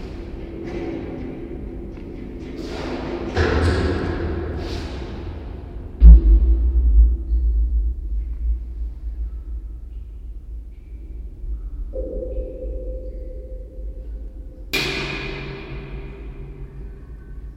Sand pool catchers in Bubeneč
Improvisation during the workshop New maps of time with John Grzinich. Recording down at the undergrounds sand pools.
favourite sounds of prague